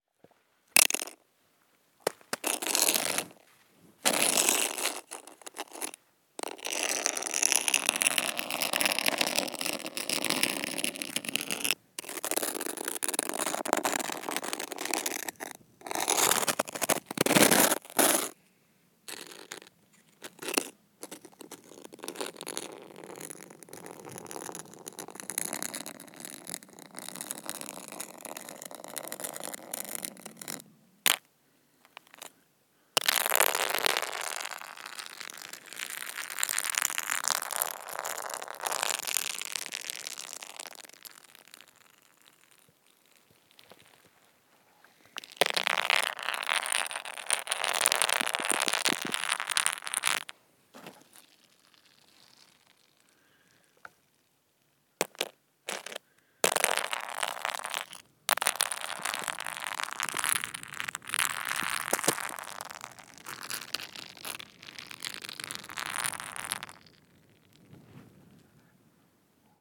Chemin de sous le Crêt, Châtel, Francia - Scraping frozen ice
Zoom H4N Pro hold closely to the hard surface of snow, scraping it with a piece of it